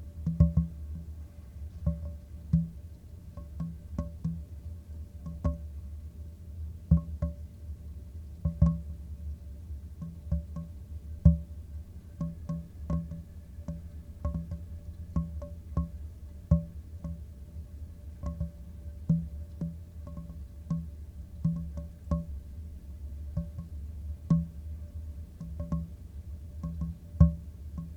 Courtyard Music (Simon-Dach-Straße) - Courtyard Music